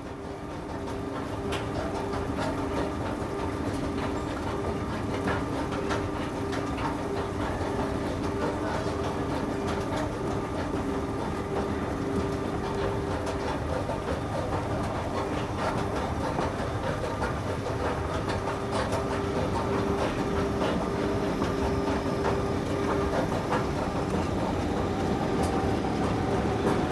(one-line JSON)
{"title": "Moving Stairway, Metro Station Jacques Brel, Anderlecht, Belgium - Metro-Station Jacques Brel", "date": "2016-10-15 16:00:00", "description": "Moving Stairway and Ambiance of the Metro-Station Jacques Brel in 1070, Anderlecht/Belgium.", "latitude": "50.85", "longitude": "4.32", "altitude": "37", "timezone": "Europe/Brussels"}